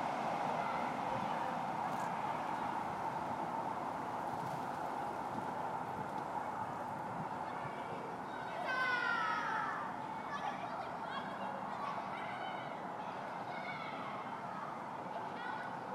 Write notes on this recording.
canal, school, children playing